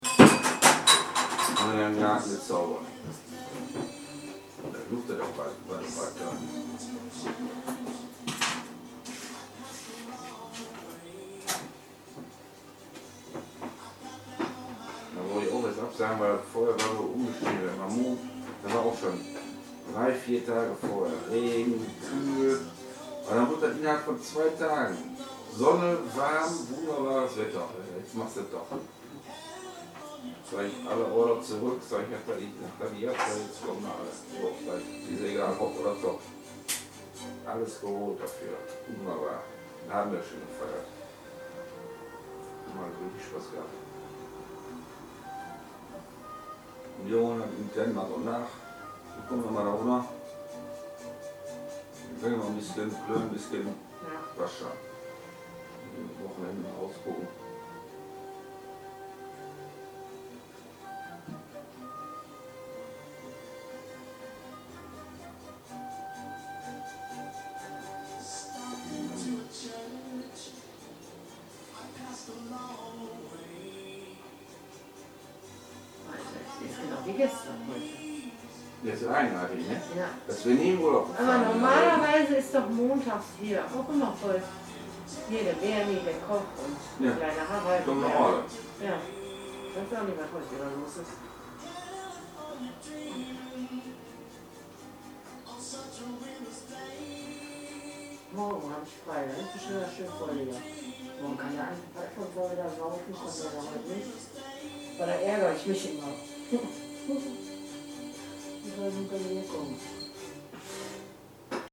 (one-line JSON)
{"title": "die schänke - die schänke, essen-frohnhausen", "date": "2010-05-10 23:20:00", "description": "die schänke, essen-frohnhausen", "latitude": "51.45", "longitude": "6.97", "altitude": "97", "timezone": "Europe/Berlin"}